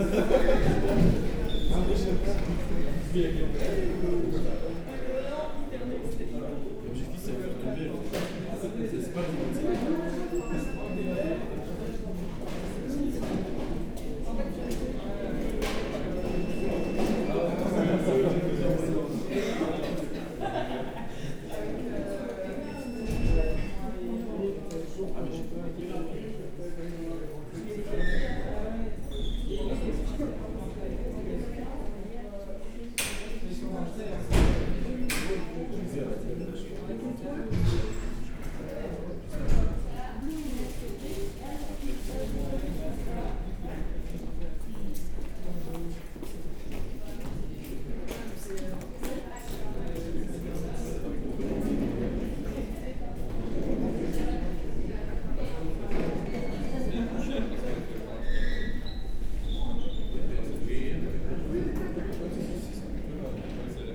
In the train station main corridor, people are discussing early in the morning. Everybody is weary !
Ottignies-Louvain-la-Neuve, Belgium, 18 March 2016, ~08:00